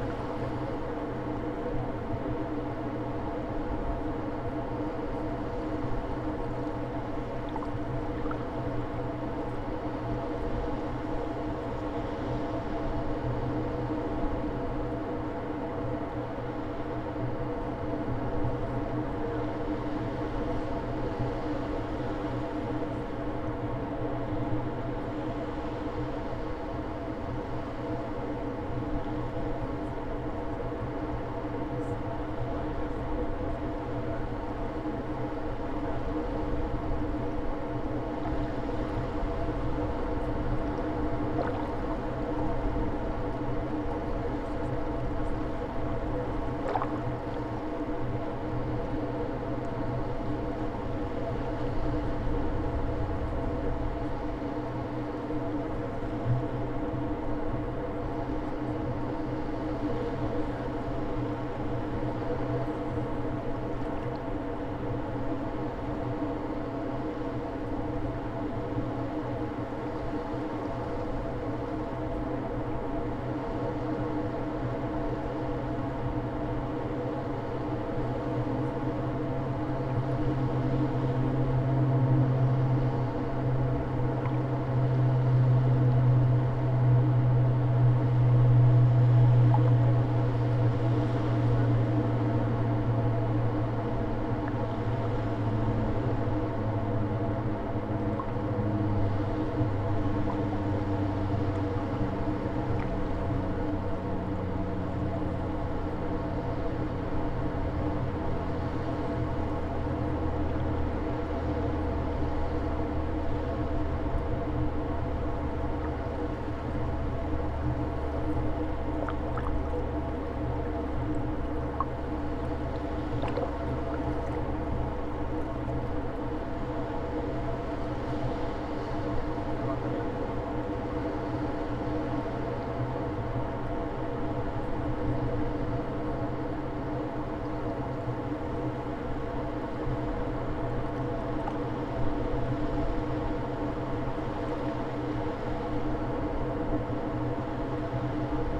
Latvia, Jurmala, in a pipe found in sea
some pipe in sea and I could not resist a desire to put microphones inside
Jūrmala, Latvia, 15 August 2012